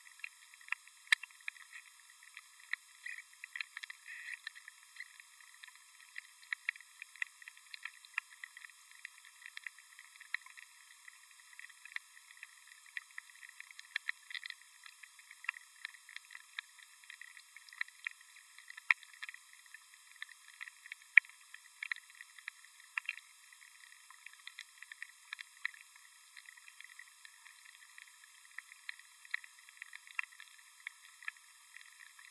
Recorded at Llangloffan Fen Nature Reserve using a Zoom H4 & a JRF hydrophone.
UK, August 2016